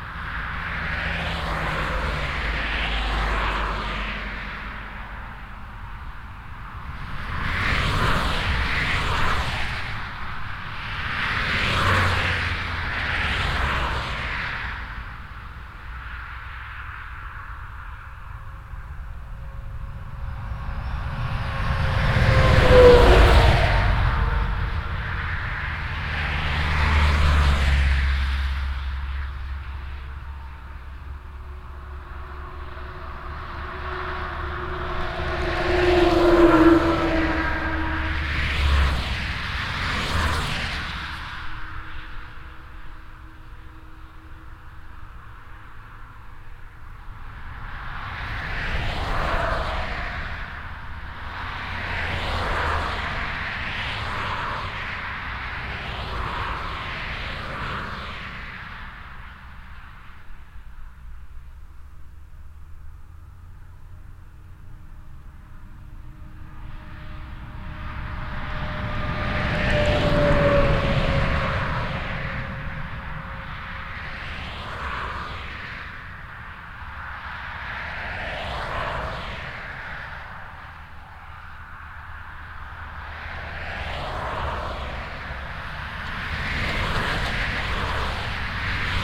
Traffic on the highwaylike E 421. Recorded in spring in the early afternoon.
Projekt - Klangraum Our - topographic field recordings, sound art objects and social ambiences
Luxembourg, 2 June 2011